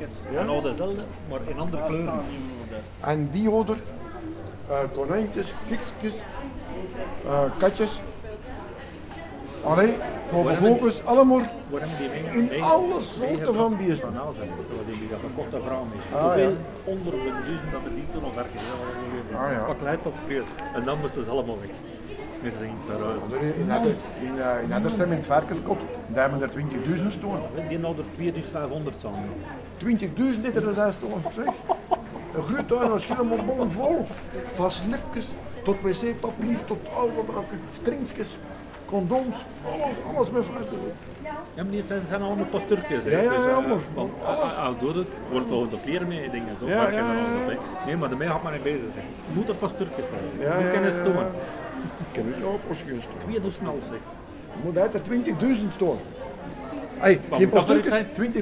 la brocante de hal / Hal flea market / World listening day
Halle, Belgium, 18 July, 11:36